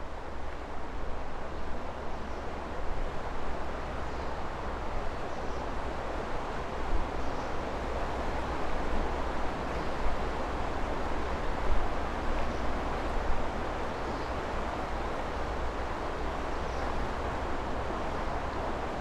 Pont de la Côte de Clermont, Côte de Clermont, Clermont-le-Fort, France - Côte de Clermon
river, bird, walker, water
Captation : ZOOMH6